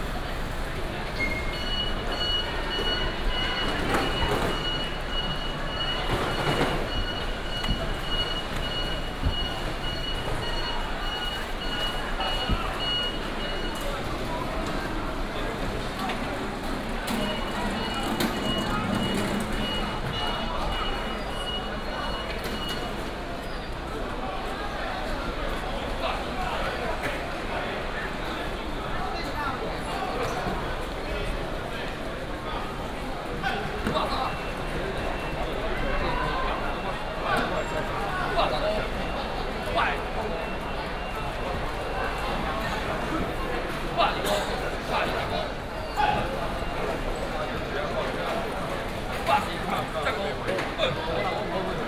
Walking in the Vegetable wholesale market, Traffic sound
Wanhua District, 萬大路531號